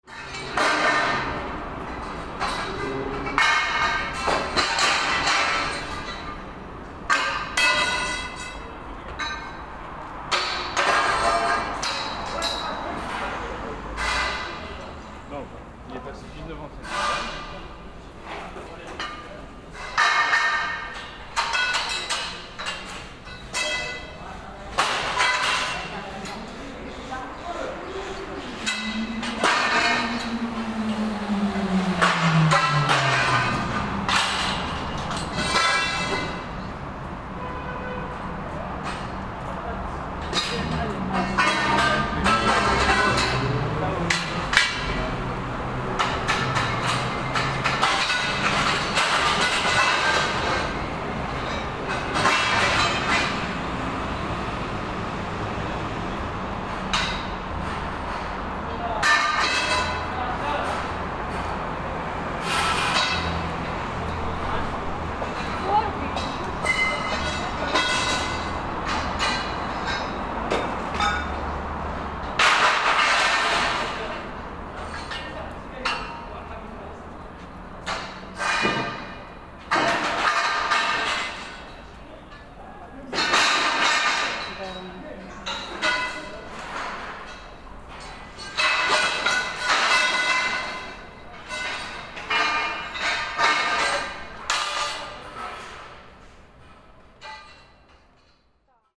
Next to the Louvre, Paris, France - Disassembling a scaffold in front of the Louvre, Paris.
Disassembling a scaffold in front of the Louvre, Paris.